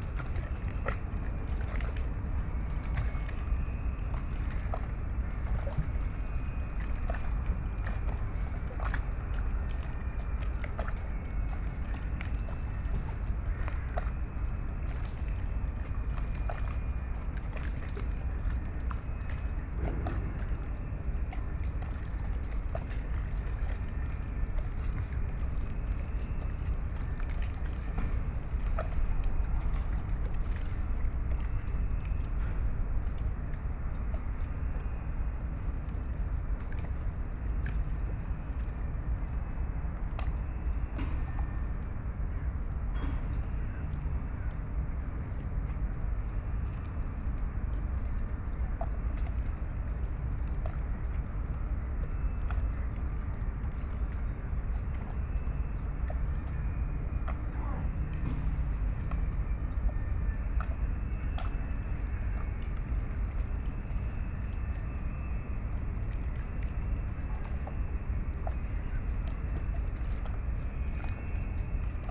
Berlin, Plänterwald, Spree - Sunday soundscape
place revisited, Sunday noon, warm winer day, feels almost like spring. Cola freighther shunting, sound of rusty ferris wheel in abandoned funfair behind.
(SD702, MKH8020 AB50)
Berlin, Germany, 7 February 2016, 12:55